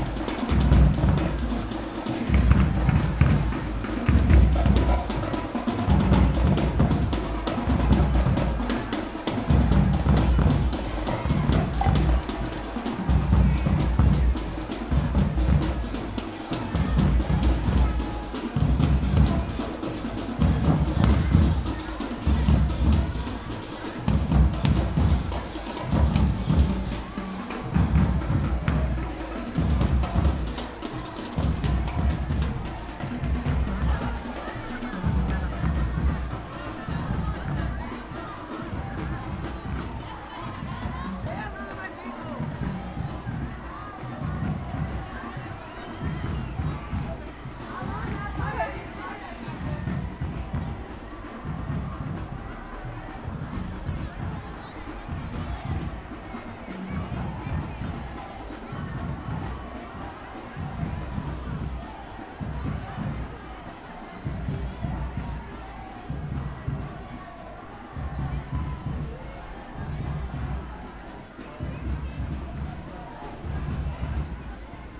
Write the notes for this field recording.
Parade on the island with marching bands. Recorded with binaural Soundman mics and Sony PCM-D100.